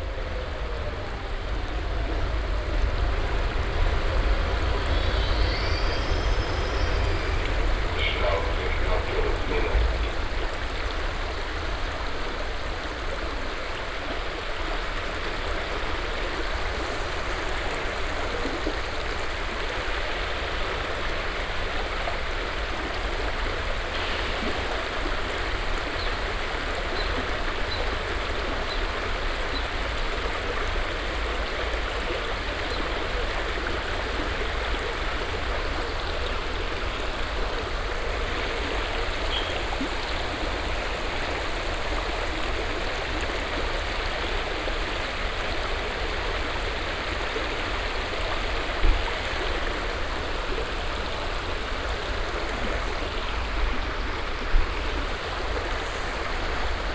michelau, river sauer
At the river sauer, the sound of the floating water - Coming from the nearby station and reflecting on the water - the sound of a train driving into the station and a french announcement followed by the constant river sound and some birds chirping inside the forest.
Michelau, Fluss Sauer
Am Fluss Sauer, das Geräusch von fließendem Wasser. Vom nahen Bahnhof kommend und im Wasser spiegelnd. Das Geräusch von einem Zug, der in den Bahnhof einfährt und eine neue Durchsage, gefolgt von einem konstanten Flussgeräusch und einigen Vögel, die im Wald zwitschern.
Michelau, rivière Sauer
Sur la rivière Sauer, le bruit de l’eau qui coule – En provenance de la station proche et se répercutant sur l’eau – le bruit d’un train entrant en gare et une annonce en français suivie du bruit continu de la rivière et quelques oiseaux gazouillant dans la forêt.
Project - Klangraum Our - topographic field recordings, sound objects and social ambiences